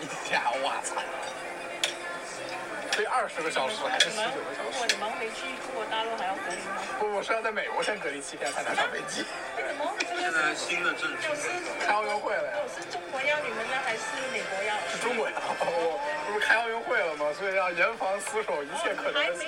Hartford Rd, New Britain, CT 06053美国 - Korean Restaurant
This is recorded from a Korean restaurant in Hartford, CT, USA. There are some Chinese students having dinner here. They are discussing about their vacation plan and college situation while enjoying their food. This is recorded by iPhone 12. The sound of students is very cleared.
Connecticut, United States, 8 January 2022